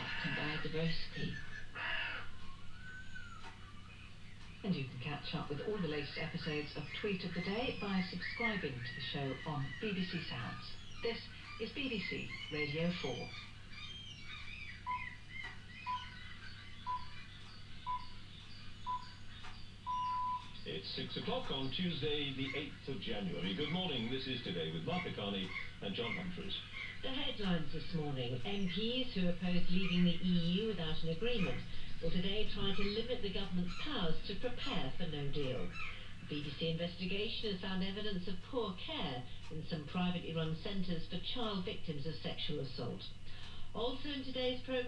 Luttons, UK - the early morning routine ...
the early morning routine ... lights ... radio ... kettle ... pills ... tea ... cereal ... download ... Luhd binaural mics in binaural dummy head ... bird calls ... mew gulls on replay on Rad Ap ... blackbird song ... clock which 'sings' the hours ...
8 January 2019, 05:50